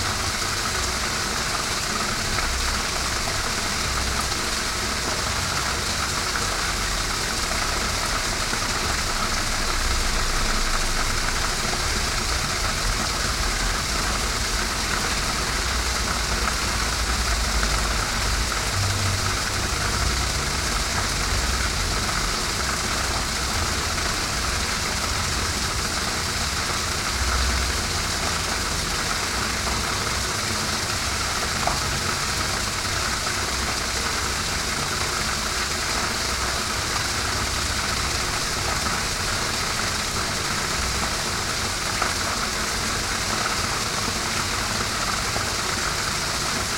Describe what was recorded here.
a manhole cover on the steep hill leading down pristaniška ulica to the river.